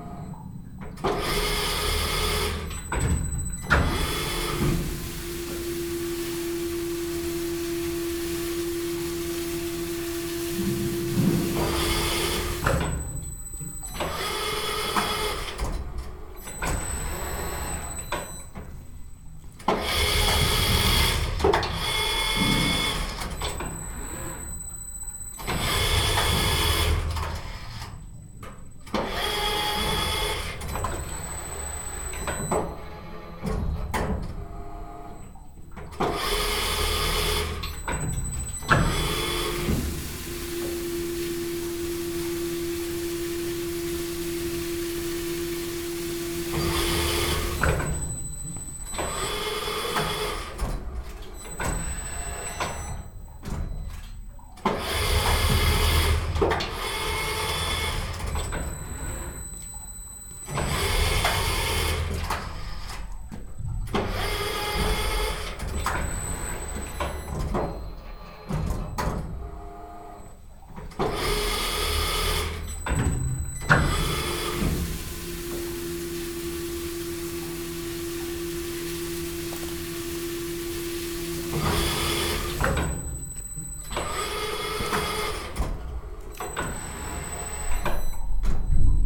Franclens, France - Cheese making
In the "fromagerie Gojon", people are making traditional cheese called Comté Pyrimont. It's a 45 kg cheese, which needs 18 to 24 months refinement. This cheese is excellent and has a very stong odour. Here, a machine is turning each cheese, brush it with water and salt, and replace it back to the good place.
Challonges, France